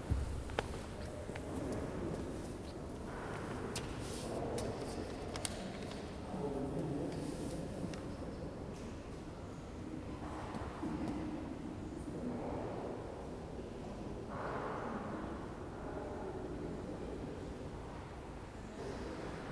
Ambience of St Denis. Restoration work on the south transept, visitors talking and milling around taking photos the edges of all softened by the live acoustic. Recorded using the internal microphones of a Tascam DR-40.
Saint-Denis, France